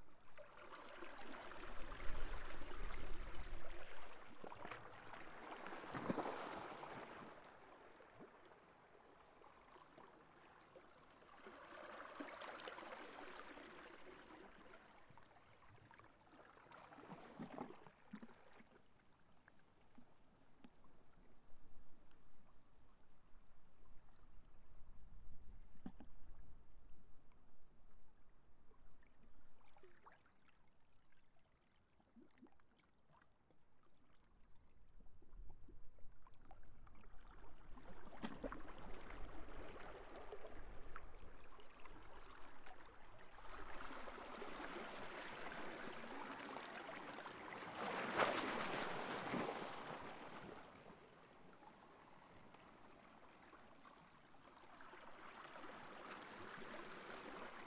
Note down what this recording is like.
Directional microphone, on the edge of lava field and the sea